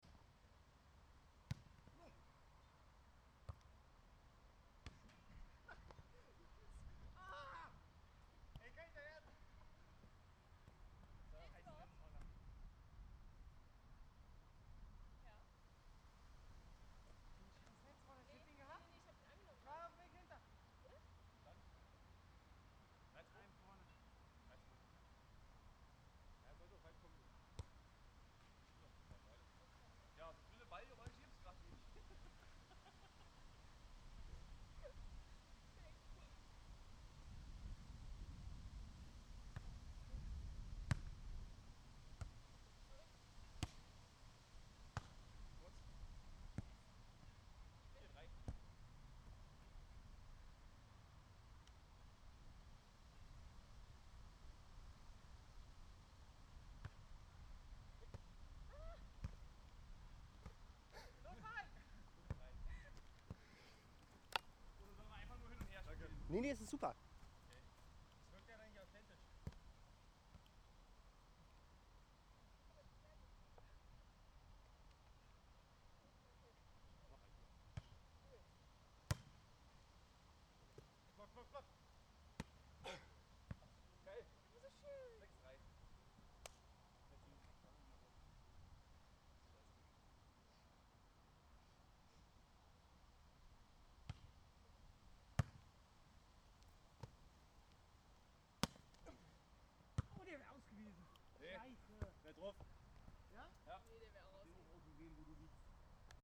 Berliner Vorstadt, Potsdam, Deutschland - Volleyball
Beachvolleyball auf dem Gelände der Schiffbauergasse